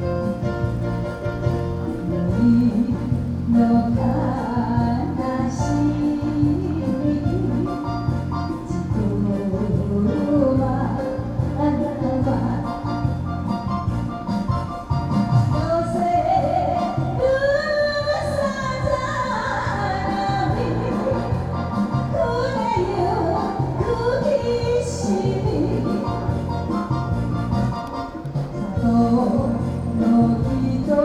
Yancheng, Kaohsiung - Community Activity Center